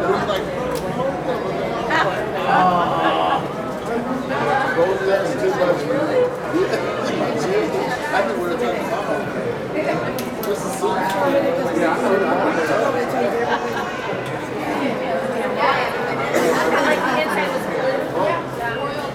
*Binaural* 300 or so people crammed into a basketball gymnasium on the last day to vote early.
Church Audio CA14>Tascam DR100 MK2